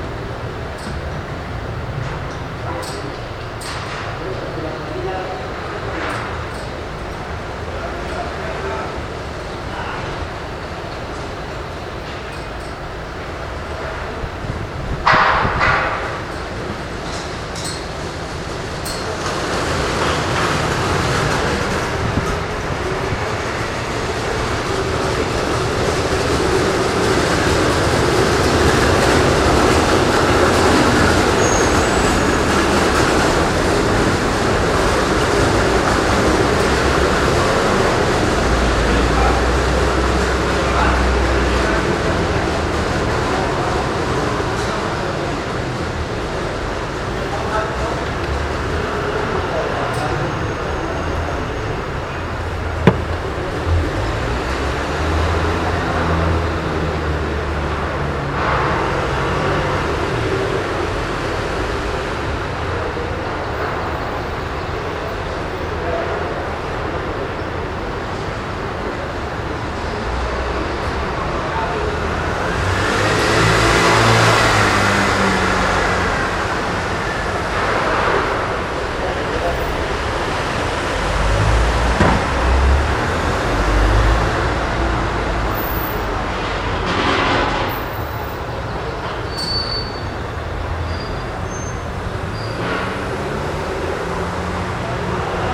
El Raval, Barcelone, Espagne - Joaquin Costa
Recording of joaquin costa noises - Sony recorder
Barcelona, Spain, 25 March 2014, 20:00